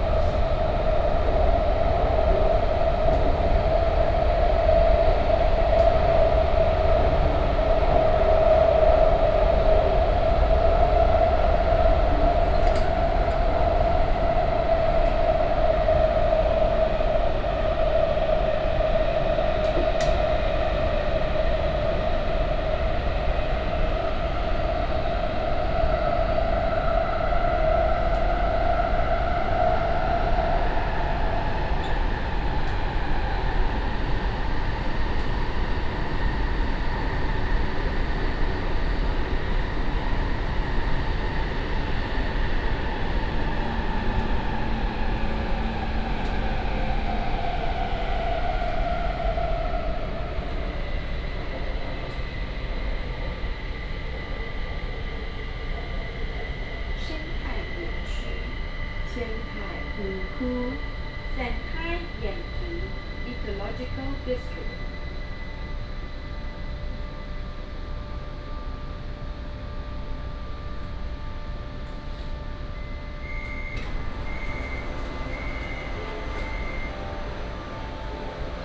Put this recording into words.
Take the MRT, In the compartment